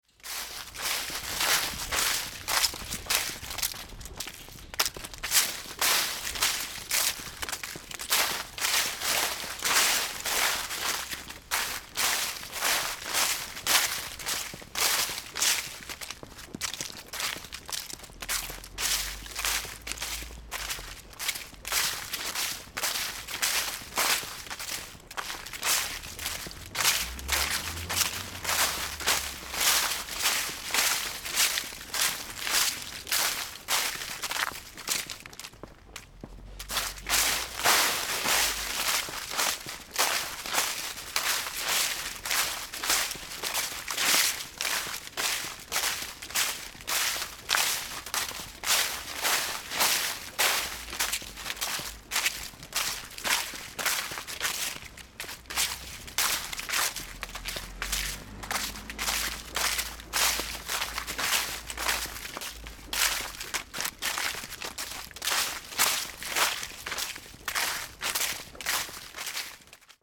Kreuzberg, Dieffenbachstr. - Herbstlaub
16.11.2008 21:30, fußgänger, herbstlaub auf der strasse / pedestrian, autumn leaves on the street
Berlin, Deutschland